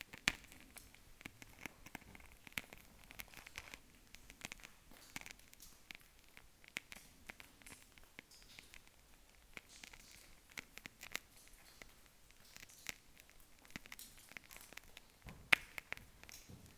Into the Saint-Georges d'Hurtières mine, there's a grave. It's the Emile Skarka memorial, a speleologist who went a lot in the Savoy underground mines with Robert Durand. We give an homage to this person and we light the very very old candle.